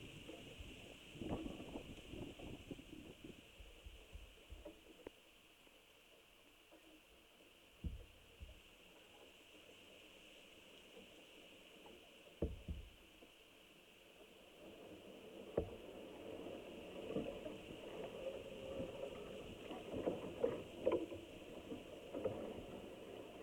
Powell Street, Avondale, Auckland, New Zealand - Puriri tree sounds at night
Contact microphone bound with tyre inner tube to trunk of sappling Pūriri tree in Oakley Creek